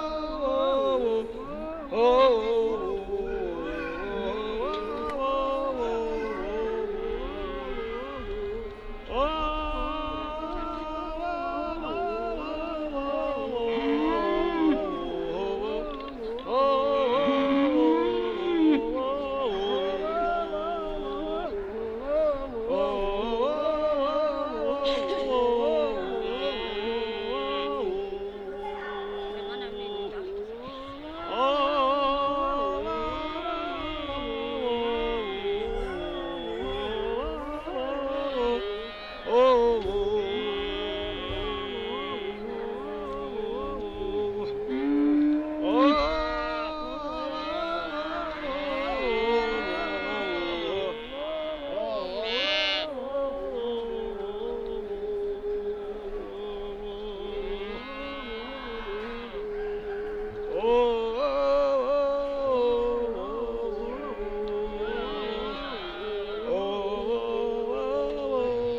National Museum of Scotland, Chambers St, Edinburgh - mongolian songs to calm you down in the city
It was recorded in national Museum of Scotland in Edinburgh, at the world folk music section. The very first time I visited this museum I was a bit sad at that time but once i sat at the one of interective screen to listen ethnographic recordings, one of the songs calm me down and made me very happy. It was mongolian milking songs to calm cows and yaks while milking them. As an interactive screen it had phone to listen through. So i put my Roland R-26 recorder close to this telephone and recorded those songs together with enviromental sounds of museum.